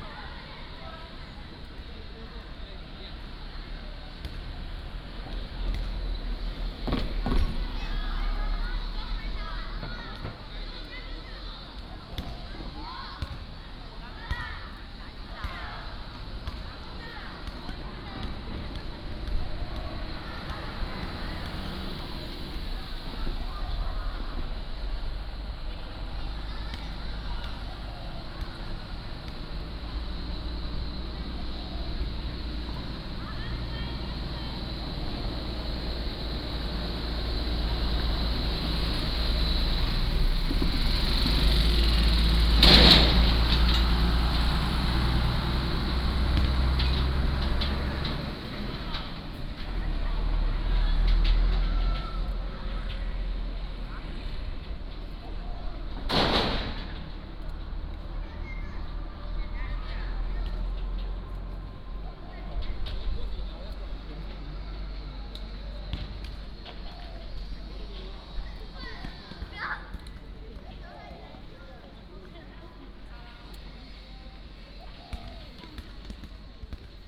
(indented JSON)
{
  "title": "坂里國民小學, Beigan Township - Small village",
  "date": "2014-10-13 15:26:00",
  "description": "next to the school, Traffic Sound, Small village",
  "latitude": "26.22",
  "longitude": "119.97",
  "altitude": "14",
  "timezone": "Asia/Taipei"
}